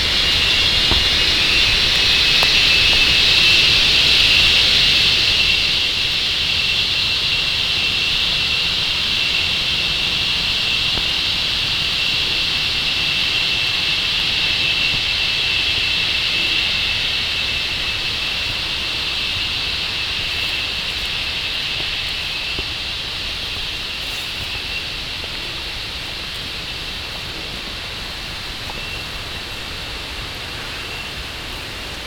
Tambon Pa Pae, Amphoe Mae Taeng, Chang Wat Chiang Mai, Thailand - Mörderzikaden Trekking mit Ben
Killer cicadas, immensely shrieking, while trecking in the woods around Pa Pae near the Myanmar border close to Mae Hong Son, Thailand. Ben is running an amazing refugees children school there, and does informative and relaxing trecking tours.
25 August